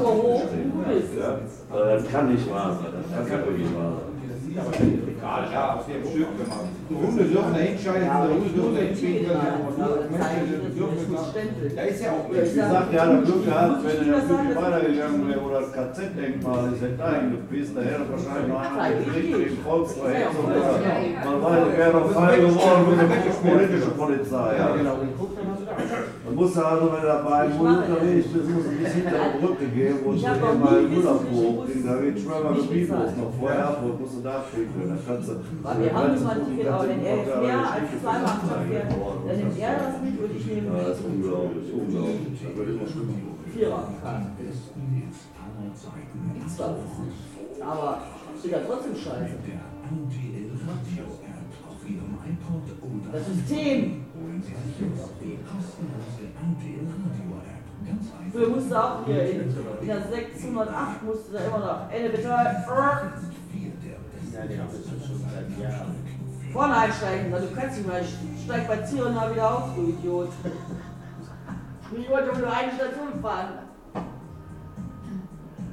zur nordstadt, schützenstr. 100, 42281 wuppertal
Wuppertal, Germany, 17 February 2011